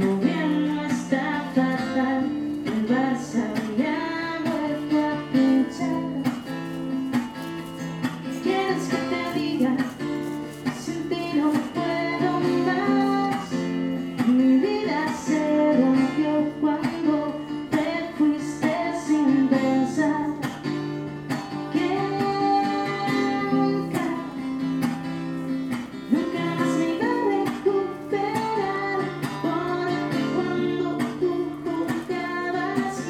Diagonal, Barcelona, Espagne - chanteuse de rue
une chanteuse de rue à la station métro Diagonale
a street singer at Diagonale metro station
Barcelona, Spain, 14 March 2019, ~19:00